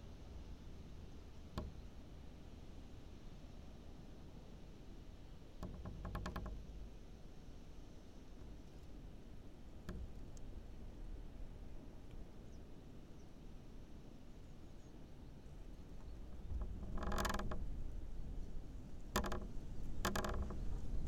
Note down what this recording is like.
there's some wooden hut at abandoned watertower. old, inclined with doors swaying in the wind...